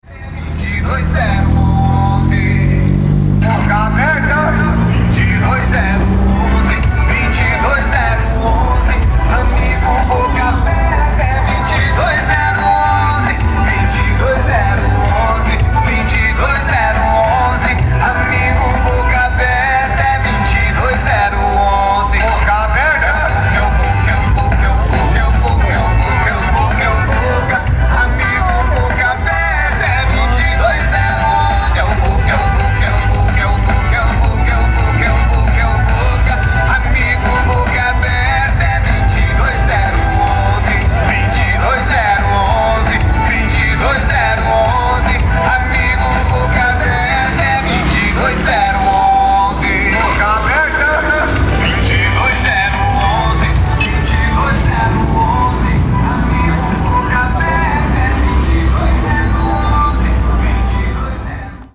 Politico - Centro, Londrina - PR, Brasil - Calçadão: Politico Boca Aberta
Panorama sonoro gravado no Calçadão de Londrina, Paraná.
Categoria de som predominante: antropofonia (Popaganda política).
Condições do tempo: ensolarado.
Data: 10/09/2016.
Hora de início: 11:30.
Equipamento: Tascam DR-05.
Classificação dos sons
Antropofonia:
Sons Humanos: Sons da Voz; Canto; Fala.
Sons da Sociedade: Figura Pública; Politico; Músicas; Jingle.
Sons Mecânicos: Maquina de Combustão Interna; Automóveis; Motocicleta.
Sons Indicadores: Buzinas e Apitos; Trafegos;
Sound panorama recorded on the Boardwalk of Londrina, Paraná.
Predominant sound category: antropophony (political Popaganda).
Weather conditions: sunny.
Data: 10/09/2016.
Start time: 11:30.
Hardware: Tascam DR-05.
Classification of sounds
Human Sounds: Sounds of the Voice; Corner; Speaks.
Sounds of the Society: Public Figure; Political; Music; Jingle.
Mechanical Sounds: Internal Combustion Machine; Automobiles; Motorcycle.
Sounds Indicators: Horns and Whistles; Trafes;